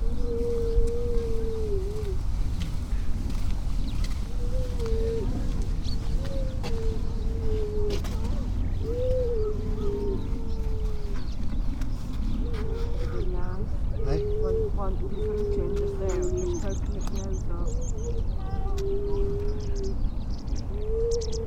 Unnamed Road, Louth, UK - grey seals ... donna nook ...
grey seals ... donna nook ... salt marsh where grey seals come to have their pups between oct-dec ... most calls from females and pups ... SASS ... bird calls from ... magpie ... brambling ... pipit ... pied wagtail ... skylark ... starling ... redshank ... curlew ... robin ... linnet ... crow ... wren ... dunnock ... every sort of background noise ... the public and creatures are separated by a fence ...